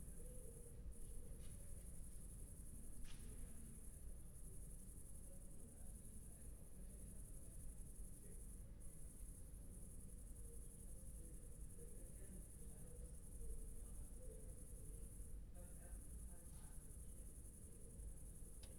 Berlin Bürknerstr., backyard window - Hinterhof / backyard ambience
22:44 Berlin Bürknerstr., backyard window
(remote microphone: AOM5024HDR | RasPi Zero /w IQAudio Zero | 4G modem